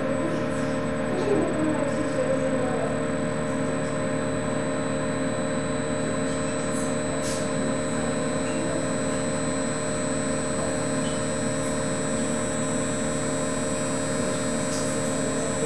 oscillating high-pitched sound of a electric generator that sends interferential current into treated area on patients body. also hum of its cooling fan and conversation of medical staff.